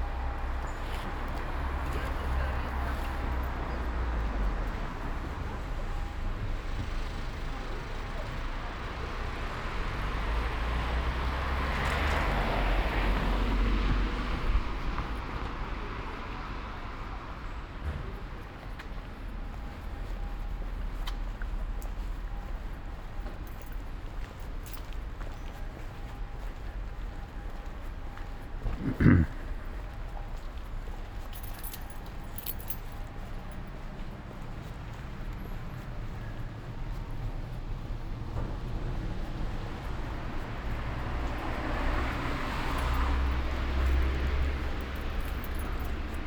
Ascolto il tuo cuore, città. I listen to yout heart, city. Several chapters **SCROLL DOWN FOR ALL RECORDINGS** - Autumn shopping afternoon in the time of COVID19: Soundwalk
"Autumn shopping afternoon in the time of COVID19": Soundwalk
Chapter CXLV of Ascolto il tuo cuore, città. I listen to your heart, city
Monday December 7th 2020. Short walk and shopping in the supermarket at Piazza Madama Cristina, district of San Salvario, Turin more then four weeks of new restrictive disposition due to the epidemic of COVID-19.
Start at 4:37 p.m., end at h. 5:17 p.m. duration of recording 40’01”''
The entire path is associated with a synchronized GPS track recorded in the (kml, gpx, kmz) files downloadable here: